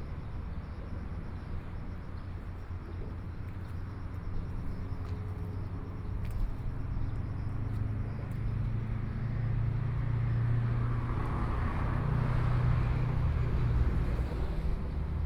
宜蘭市南津里, Yilan County - In the bottom of the track
In the bottom of the track, Traffic Sound, Birds, Trains traveling through
Sony PCM D50+ Soundman OKM II
Yilan City, Yilan County, Taiwan, 26 July 2014